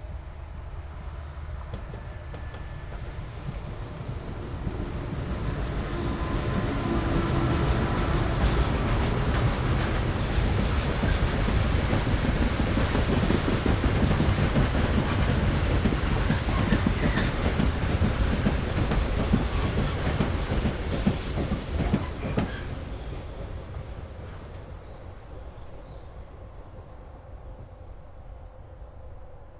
near Allrath, Germany - Close brown coal train
Specially strengthened tracks to take the weight of the brown coal loads run from the mines to the power stations.